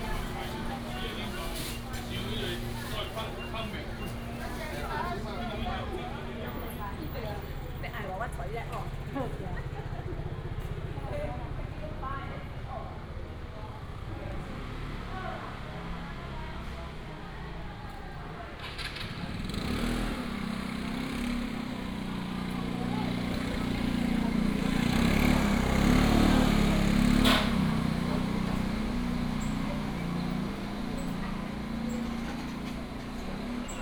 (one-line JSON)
{"title": "虎尾第一零售市場, Huwei Township - Walking in the market", "date": "2017-03-03 09:56:00", "description": "Walking in the market", "latitude": "23.71", "longitude": "120.44", "altitude": "33", "timezone": "Asia/Taipei"}